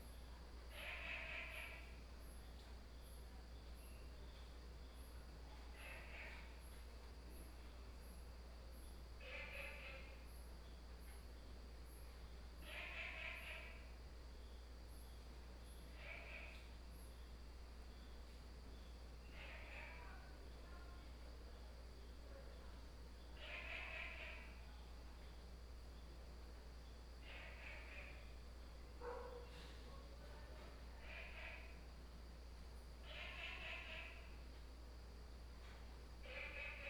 Woody House, 南投縣埔里鎮桃米里 - In the restaurant
Frogs sound, In the restaurant, at the Bed and Breakfast
Nantou County, Taiwan, 2 September, ~21:00